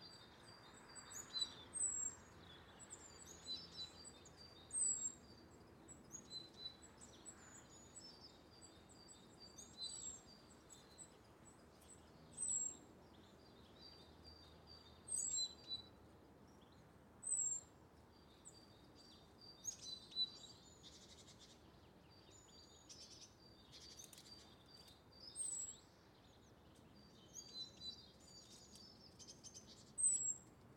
{
  "title": "Les Clairières, Verneuil-sur-Seine, France - un matin au soleil dhiver à Verneuil",
  "date": "2020-02-18 00:31:00",
  "description": "AT4041 couple (ORTF), SD302 preamp, Zoom H6 recorder.\nstarlings, tit, woodpecker, and blackbird taking their breakfast in the grass under the trees in Verneuil.",
  "latitude": "48.99",
  "longitude": "1.96",
  "altitude": "33",
  "timezone": "Europe/Paris"
}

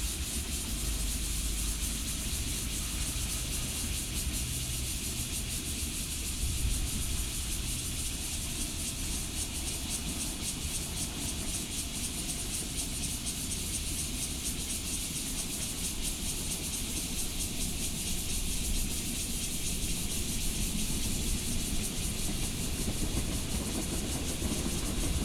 {
  "title": "Fugang - Abandoned factory",
  "date": "2013-08-14 13:16:00",
  "description": "Hot noon, Cicadas, Distant sound of thunder, The sound of the train traveling through, Sony PCM D50+ Soundman OKM II",
  "latitude": "24.93",
  "longitude": "121.08",
  "timezone": "Asia/Taipei"
}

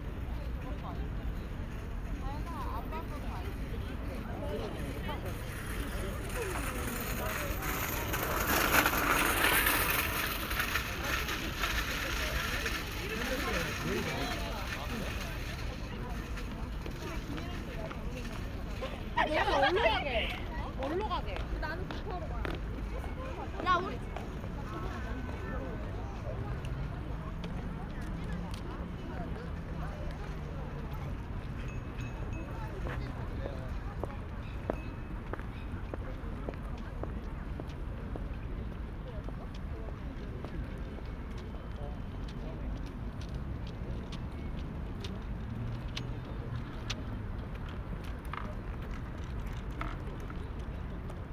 {
  "title": "대한민국 서울특별시 서초구 잠원동 122 - Banpo Hangang Park, People taking walk",
  "date": "2019-10-20 20:04:00",
  "description": "Banpo Hangang Park, People taking walk\n반포한강공원, 사람들 산책하는 소리",
  "latitude": "37.51",
  "longitude": "127.00",
  "altitude": "8",
  "timezone": "Asia/Seoul"
}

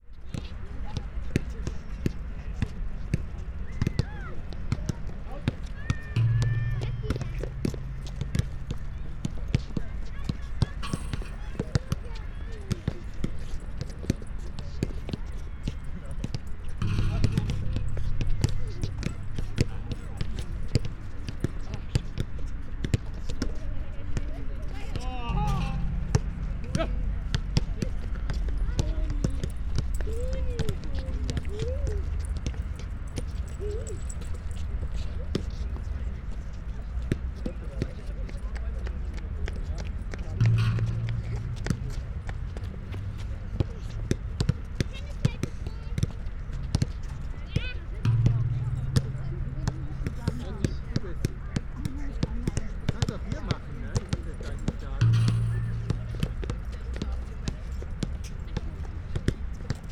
{
  "title": "park, Venloer Str./ Kanalstr., Köln - basket ball player",
  "date": "2014-01-05 14:00:00",
  "description": "place revisited: kids and parents playing basket ball on a sunny Sunday afternoon in winter, 10°C. nice sound of the ball hitting basket and bars\n(PCM D50, Primo EM172)",
  "latitude": "50.94",
  "longitude": "6.93",
  "altitude": "44",
  "timezone": "Europe/Berlin"
}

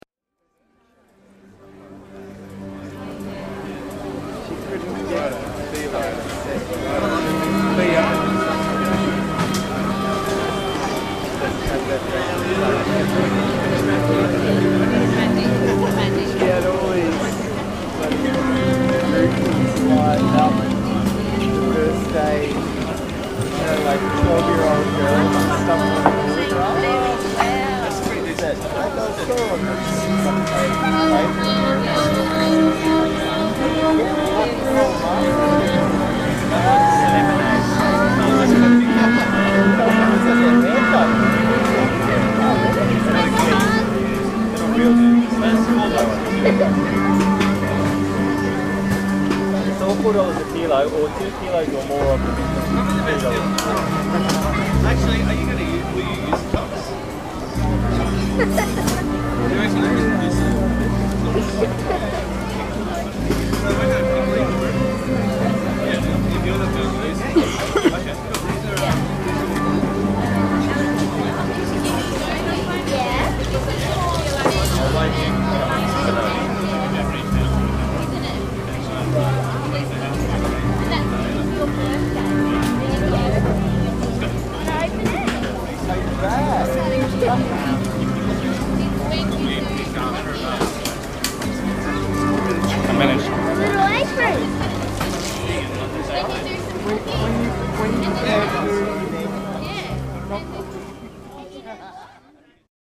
{
  "title": "Windsor, Brisbane. Northey st Organic Markets.",
  "date": "2010-07-11 08:40:00",
  "description": "Northey street City Farm, Organic Markets Sunday Morning, Man playing double bass, stall holders selling goods, family and friends chatting .",
  "latitude": "-27.44",
  "longitude": "153.03",
  "altitude": "3",
  "timezone": "Australia/Brisbane"
}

